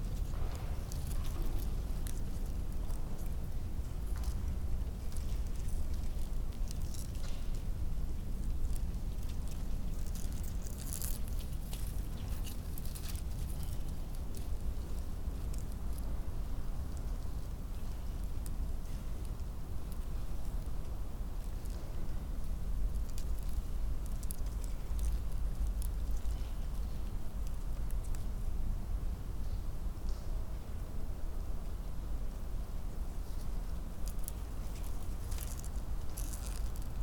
{"title": "Brussels, Belgium - Discarded VHS tape fluttering in the wind", "date": "2013-06-21 14:30:00", "description": "This old factory is now completely full of discarded junk, including quite a lot of VHS tape, which has in time unwound itself from its containment, and dissipated throughout the building in long, fluttery drifts. Because so much of the glass from the windows is missing inside the derelict building, the wind rips right through the space, shaking all the things in its path; loose panes of glass, heavy doors that hang in their frames, and the VHS tape that has been left lying around.", "latitude": "50.90", "longitude": "4.43", "altitude": "18", "timezone": "Europe/Brussels"}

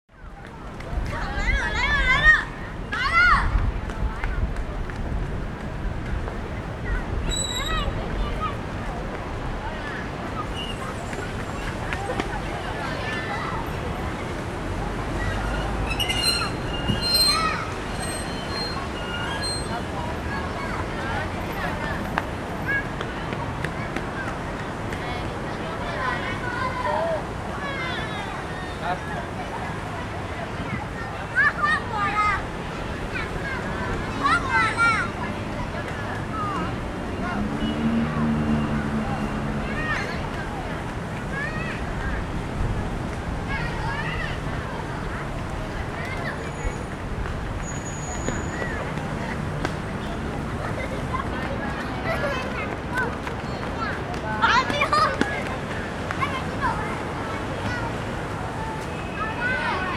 {"title": "Gushan District, Kaohsiung - Children", "date": "2012-02-25 16:56:00", "description": "Children playing games in the park, Sony ECM-MS907, Sony Hi-MD MZ-RH1", "latitude": "22.67", "longitude": "120.30", "altitude": "9", "timezone": "Asia/Taipei"}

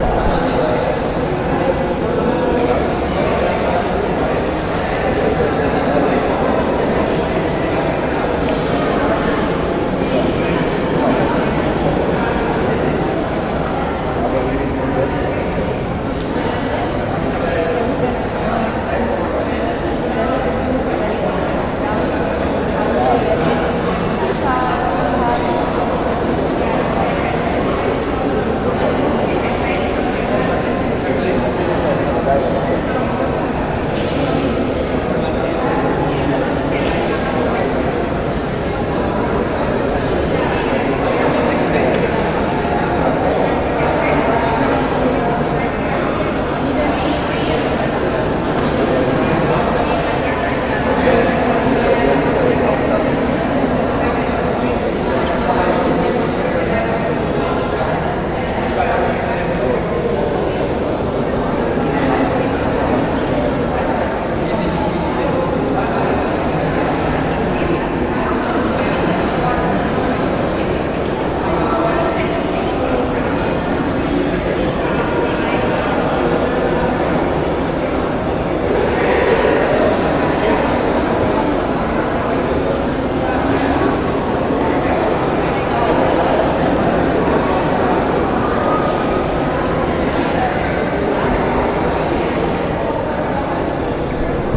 {"title": "IG Farben Building, Grüneburgplatz, Frankfurt am Main, Deutschland - Café Rotunde", "date": "2012-06-26 15:56:00", "description": "The sound was recorded in the IG-Farben-Building at the University of Frankfurt am Main (Café Rotunde)", "latitude": "50.13", "longitude": "8.67", "altitude": "118", "timezone": "Europe/Berlin"}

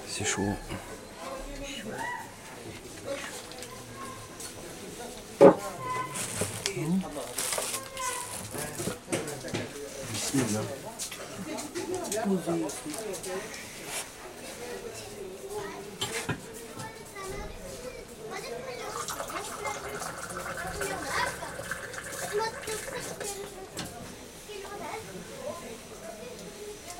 {"title": "Essaouira, Derb Ibn Khaldoun, Hassans store", "date": "2006-09-12 18:04:00", "description": "Africa, Morocco, Essaouira, tea", "latitude": "31.51", "longitude": "-9.77", "altitude": "9", "timezone": "Africa/Casablanca"}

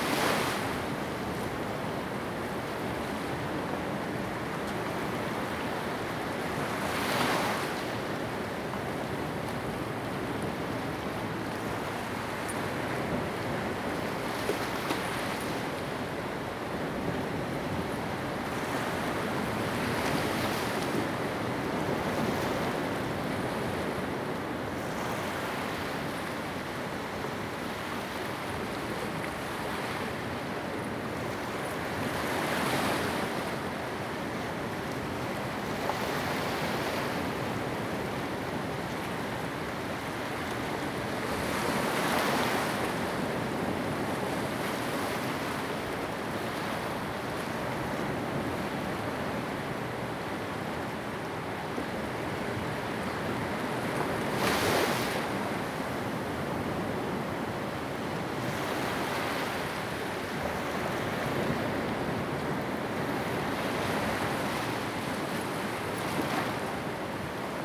{"title": "石門區德茂里, New Taipei City - Sound of the waves", "date": "2016-04-17 06:33:00", "description": "at the seaside, Sound of the waves\nZoom H2n MS+XY", "latitude": "25.29", "longitude": "121.52", "altitude": "3", "timezone": "Asia/Taipei"}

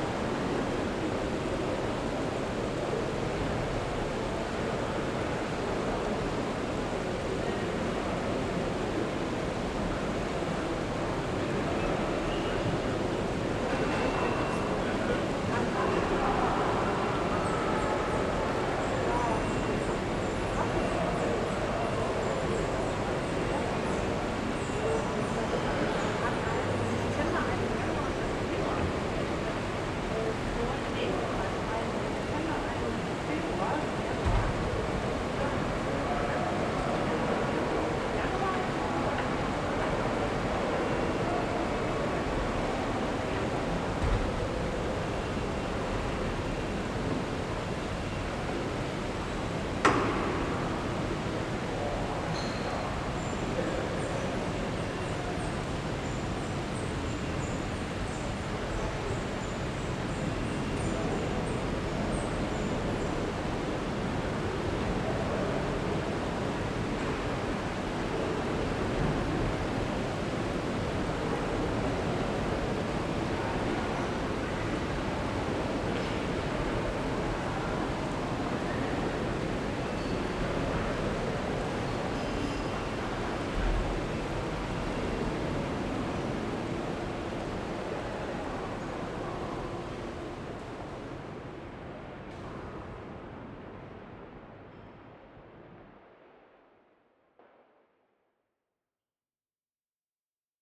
{"title": "Wersten, Düsseldorf, Deutschland - Düsseldorf. Provinzial insurance building, main hall", "date": "2012-12-11 11:30:00", "description": "Inside the main hall of the building of the insurance provider Provinzial. The high glas and stone walled hall is filled with living plants and trees and a water stream runs through the building. The sound of the water streaming by, the sounds of steps and people talking as they walk though the hall and the beeping signals of elevators.\nThis recording is part of the exhibition project - sonic states\nsoundmap nrw -topographic field recordings, social ambiences and art places", "latitude": "51.20", "longitude": "6.81", "altitude": "45", "timezone": "Europe/Berlin"}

